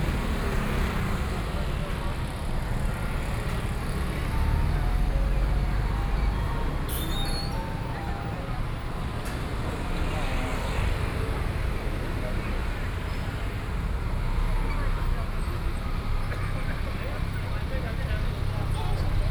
Sec., Zhongshan Rd., 宜蘭市中山里 - walking on the Road
Various shops voices, Traffic Sound, walking on the Road
Sony PCM D50+ Soundman OKM II